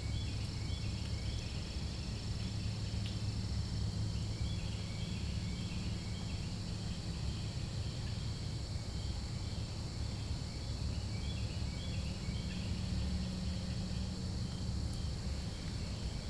Chubb Trail, Eureka, Missouri, USA - 1923 Tunnel
Recording from within a tunnel that passes under train tracks. Anthrophonic sounds greater from the left channel and biophonic greater from the right channel.